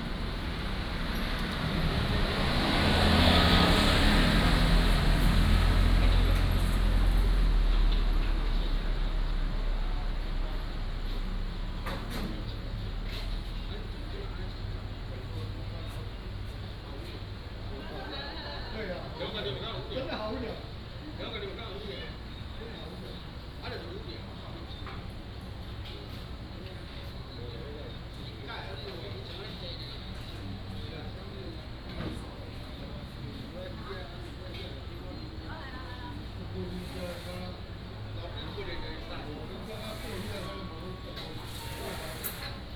Lüdao Township, Taitung County - In the Street
In the Street